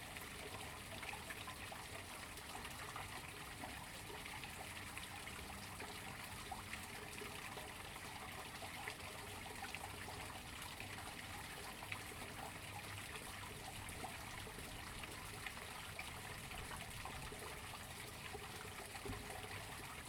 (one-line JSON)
{"title": "Shirokanedai, Minato-ku, Tōkyō-to, Japonia - Rain Drain", "date": "2015-02-15 14:50:00", "description": "Rainwater hitting the drain after an all night's rain.", "latitude": "35.64", "longitude": "139.73", "altitude": "30", "timezone": "Asia/Tokyo"}